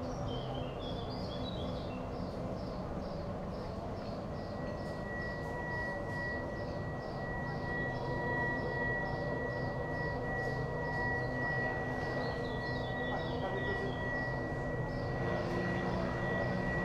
lisbon goethe institut - sound installation
sound installation in the garden of goethe institute